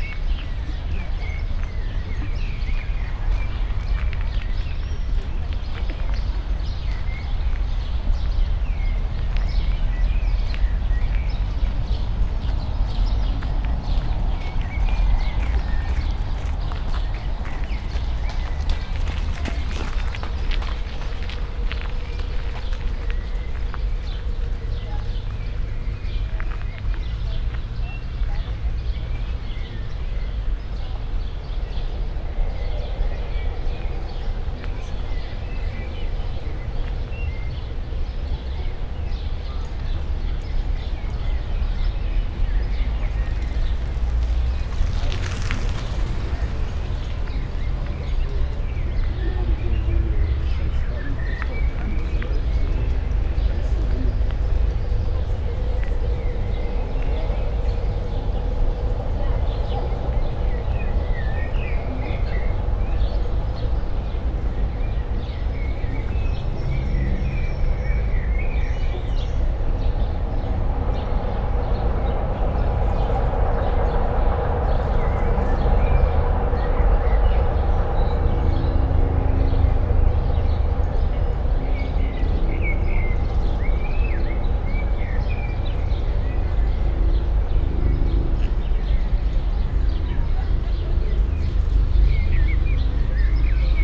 Sommerbad Kreuzberg, Berlin, Germany - walk
slow walk on sandy pathway, bikers, joggers, walkers, talkers, blackbirds ...